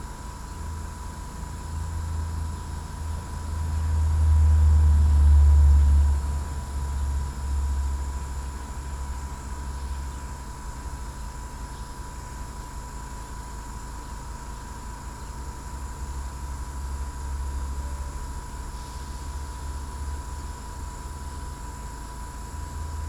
wastewater treatment plant, Marsaskala, Malta - sounds of purification devices